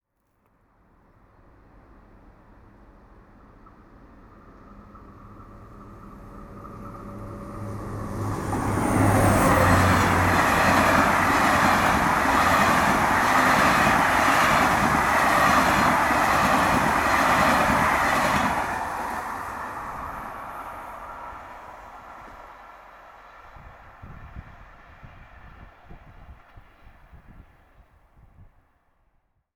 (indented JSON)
{
  "title": "Pont De Brique",
  "date": "2011-04-25 16:29:00",
  "description": "train passing through a station",
  "latitude": "50.68",
  "longitude": "1.63",
  "altitude": "8",
  "timezone": "Europe/Paris"
}